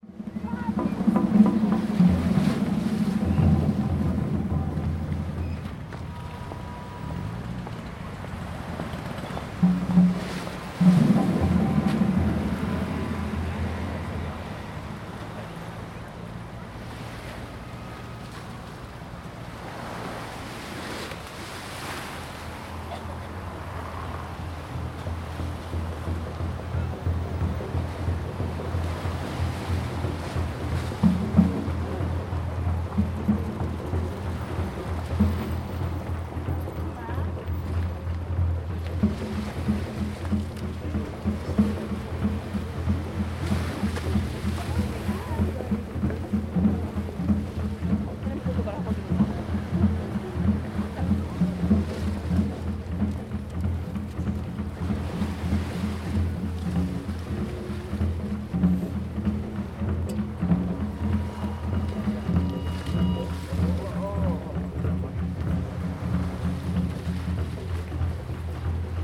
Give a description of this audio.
A drum concert going on near the shore of Enoshima island